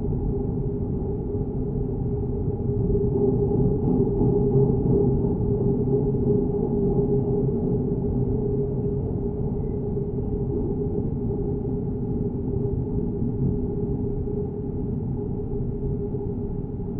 Railway Metal Bridge over the river Weser, Thünder, Lower Saxony, Germany - PASSING BY TRANSPORT TRAIN (Recorded Through The Metallic Construction)
The passing by train was recorded through the metallic construction beside the railroad. The microphone was attached through the magnetic contact, which was connected on the metallic construction 5-6m away from the railroad.